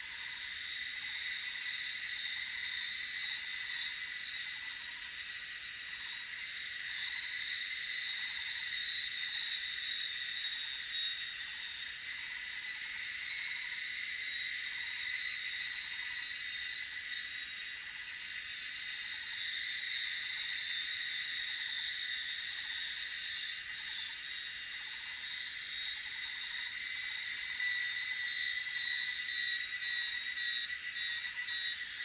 水上巷桃米里, Puli Township - Cicada sounds and Dogs barking
Cicada sounds, Bird sounds, Dogs barking, in the woods
Zoom H2n MS+XY